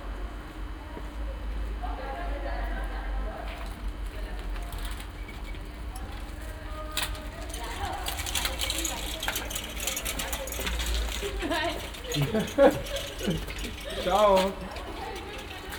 {
  "title": "Sanderstr., Neukölln, Berlin - noisy defective light",
  "date": "2014-02-05 23:55:00",
  "description": "Berlin, Sanderstr. at night, noisy defective light over house entrance, steps, voices",
  "latitude": "52.49",
  "longitude": "13.42",
  "timezone": "Europe/Berlin"
}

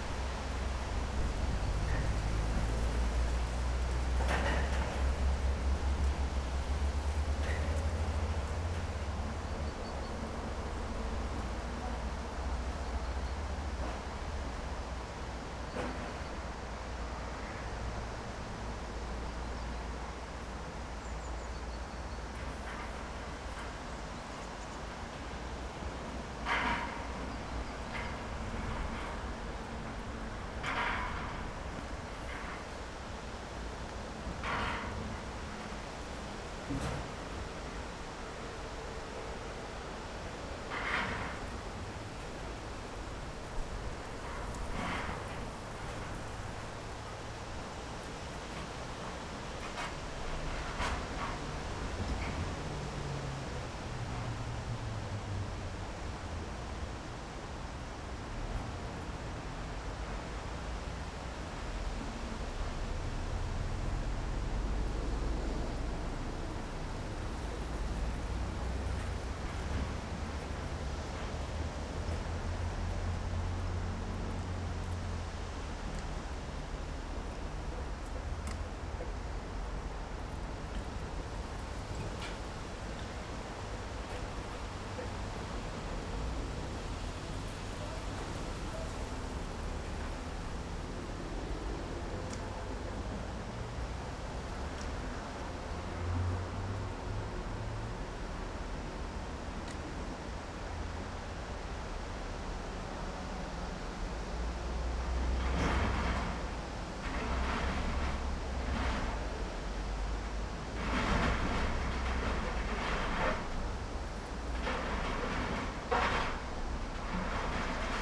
{
  "title": "Teofila Mateckiego, Poznan - scrapyard delivery",
  "date": "2018-10-24 11:37:00",
  "description": "a delivery arrived at the scrapyard. recorded behind an aluminum fence that rattles in the wind. metal junk being moved towards one place and then lifted onto a scrap pile. dried bushes rustling. a busy railroad crossing to the left. (roland r-07)",
  "latitude": "52.47",
  "longitude": "16.90",
  "altitude": "100",
  "timezone": "Europe/Warsaw"
}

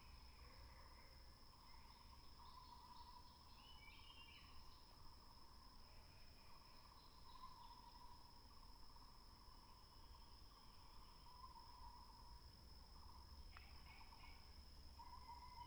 {
  "title": "Hualong Ln., Yuchi Township - Birds and Frogs sound",
  "date": "2016-05-04 07:04:00",
  "description": "Birds called, Frogs chirping",
  "latitude": "23.93",
  "longitude": "120.89",
  "altitude": "725",
  "timezone": "Asia/Taipei"
}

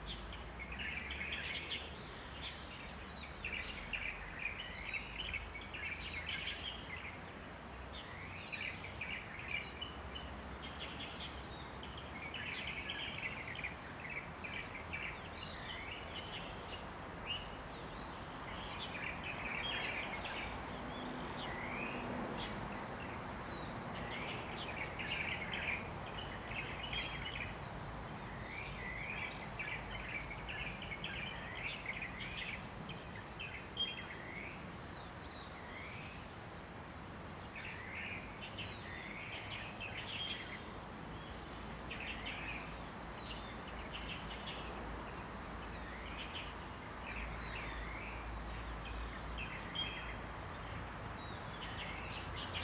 {
  "title": "桃米里, Puli Township, Nantou County - Birds singing",
  "date": "2015-10-07 06:37:00",
  "description": "In the stream, Traffic Sound, Birds singing\nZoom H2n MS+XY",
  "latitude": "23.94",
  "longitude": "120.93",
  "altitude": "459",
  "timezone": "Asia/Taipei"
}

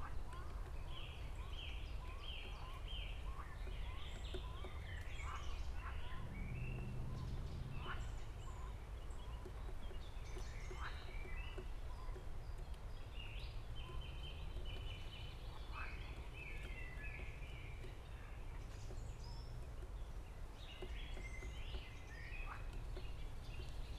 {
  "title": "Königsheide, Berlin - forest ambience /w woodpecker",
  "date": "2020-05-17 13:55:00",
  "description": "I wanted to record the frogs, but suddenly a great spotted woodpecker (Dendrocopos major, Buntspecht) arrived and started working on the wooden pole where the left microphone was attached too. So it goes.\n(Sony PCM D50, DPA 4060)",
  "latitude": "52.45",
  "longitude": "13.49",
  "altitude": "35",
  "timezone": "Europe/Berlin"
}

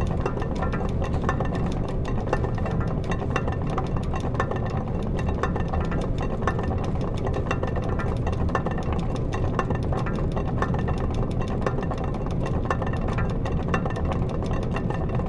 {
  "title": "Differdange, Luxembourg - Fan",
  "date": "2017-04-16 08:00:00",
  "description": "In an underground mine, a very big fan (diameter 3 meters) is naturally turning with air. Because of the outside temperature, it's not turning everytime the same. For example, recordings made 20 years ago are very different.",
  "latitude": "49.52",
  "longitude": "5.85",
  "altitude": "394",
  "timezone": "Europe/Luxembourg"
}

